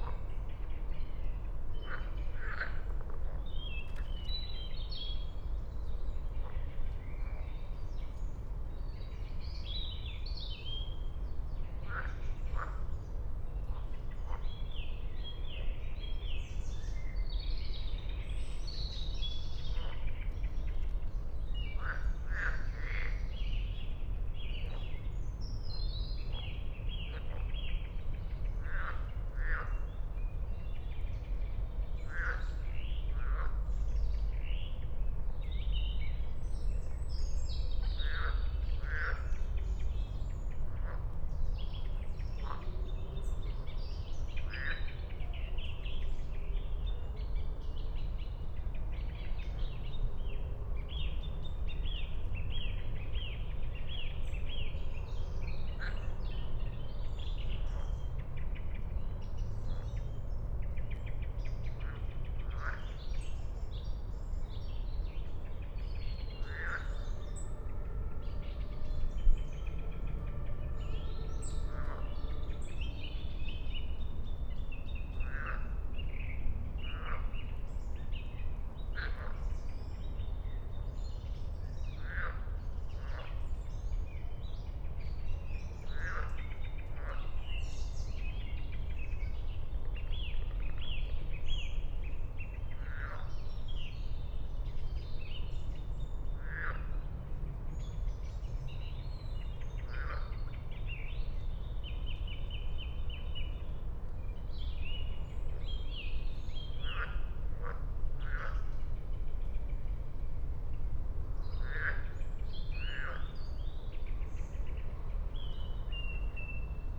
Königsheide, Berlin - evening ambience at the pond
evening at the Königsheide pond, distant city rumble
(SD702, MKH8020)